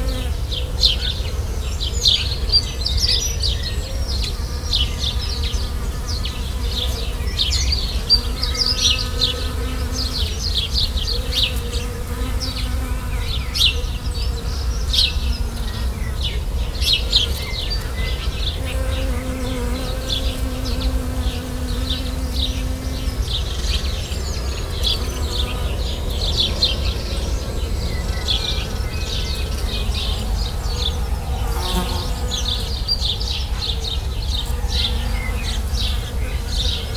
Fruitlands, Malvern, UK - Birds, Bees, 2 Trains and a Car
Recorded outside the front window with Sound Devices 744 and a pair of DPA 4060 Omni Mics. Loads of Bee's buzzing in the bush and some birds. A train pulls up to wait by the tunnel through the Malvern Hills, a car drives past then another train.